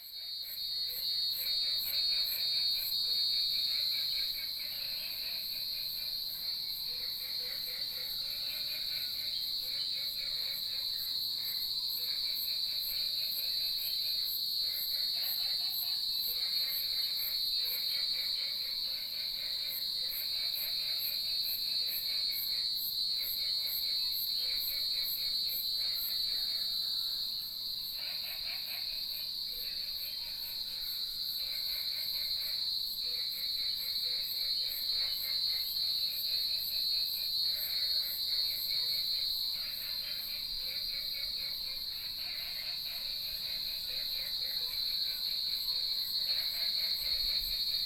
埔里鎮桃米里, Nantou County - Early morning
Frogs chirping, Bird calls, Cicadas cry, Chicken sounds